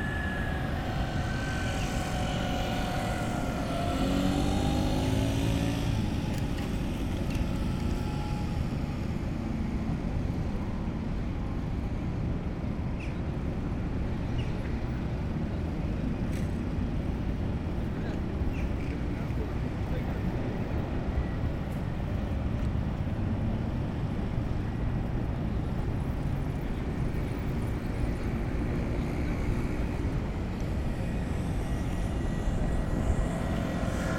Den Haag, Nederlands - Red light signal
Bezuidenhoutseweg. A red light signal closes the crossroads when tramways pass. As there’s a lot of tramways, it’s closing a lot but shortly.
Den Haag, Netherlands, 30 March 2019